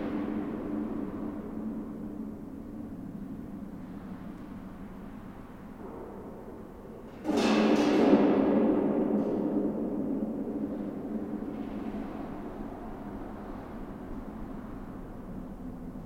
Inside an higway bridge. There's a special intense reverberation in the bridge tunnels. In aim to valorize this reverberation, I'm playing with an abandoned tin of olive oil. I'm doing nothing else than pushing it slowly, and sounds became quickly atrocious.
Belgium, 15 April 2016